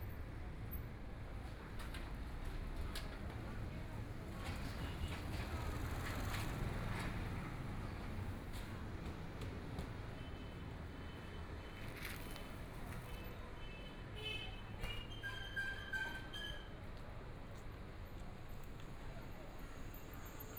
Guizhou Road, Shanghai - Walking through the old neighborhoods
Walking through the old neighborhoods, Traffic Sound, Shopping street sounds, The crowd, Bicycle brake sound, Trumpet, Brakes sound, Footsteps, Bicycle Sound, Motor vehicle sound, Binaural recording, Zoom H6+ Soundman OKM II
Huangpu, Shanghai, China